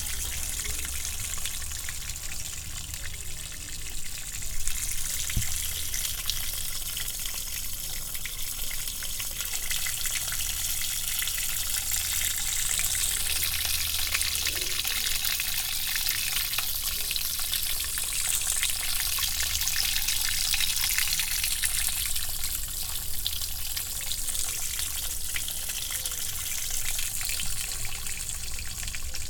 Via O. Caosi, Serra De Conti AN, Italia - Gutter water flowing, pigeons, still quarreling couple
Sony Dr 100
Serra De Conti AN, Italy, 26 May, ~16:00